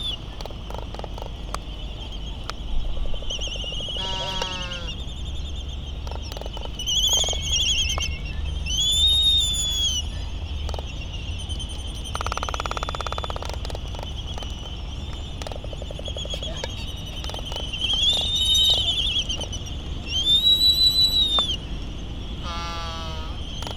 United States Minor Outlying Islands - Laysan albatross soundscape ...
Laysan albatross soundscape ... Sand Island ... Midway Atoll ... recorded in the lee of the Battle of Midway National Monument ... open lavalier mics either side of a furry covered table tennis bat used as a baffle ... laysan albatross calls and bill rattling ... very ... very windy ... some windblast and island traffic noise ...